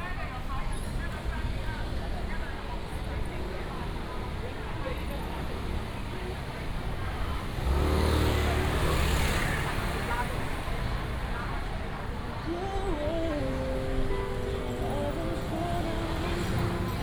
Kaifeng Rd., Xinxing Dist., Kaohsiung City - Traditional market
Traditional market, Traffic sound
Binaural recordings, Sony PCM D100+ Soundman OKM II
Kaohsiung City, Taiwan, March 2018